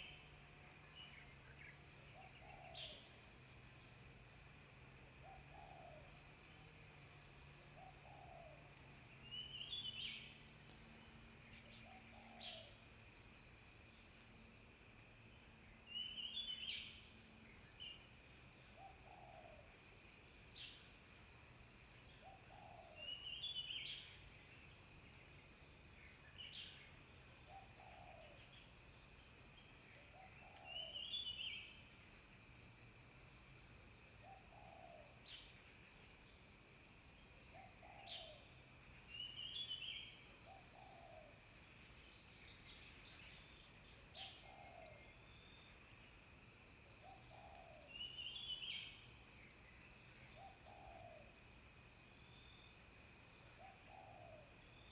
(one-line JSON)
{"title": "種瓜路, 桃米里 Puli Township - For woods", "date": "2016-04-25 16:54:00", "description": "For woods, Bird sounds\nZoom H2n MS+XY", "latitude": "23.96", "longitude": "120.92", "altitude": "657", "timezone": "Asia/Taipei"}